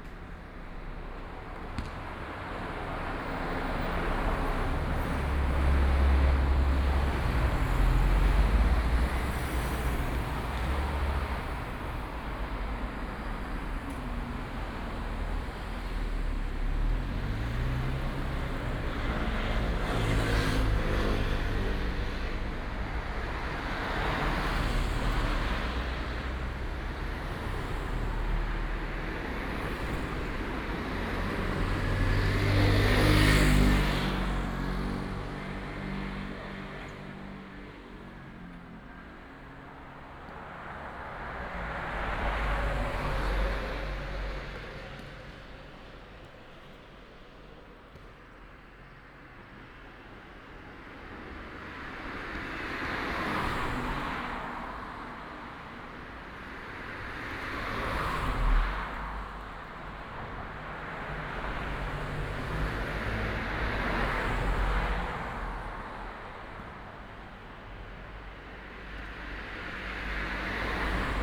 石城復興宮, Dongshi Dist., Taichung City - In front of the temple
In front of the temple, Traffic sound, play basketball, Binaural recordings, Sony PCM D100+ Soundman OKM II